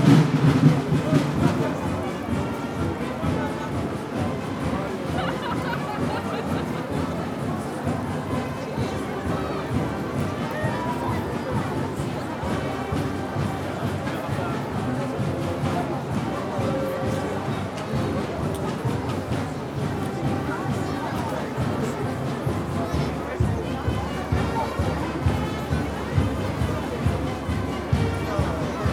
Place Emile Zola, Barjols, France - La saint Marcel 2019
Célébration du Saint Marcel patron protecteur de la ville
Celebration of Saint Marcel patron saint of the city